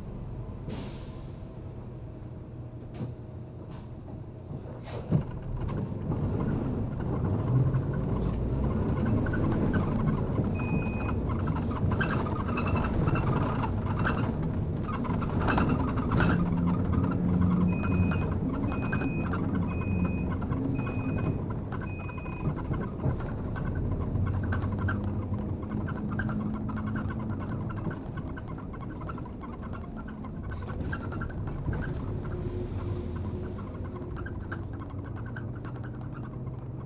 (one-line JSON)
{"title": "Gyeongju-si, South Korea - Bus ride", "date": "2016-10-06 14:30:00", "description": "Public bus ride in Gyeongju City", "latitude": "35.84", "longitude": "129.21", "altitude": "39", "timezone": "Asia/Seoul"}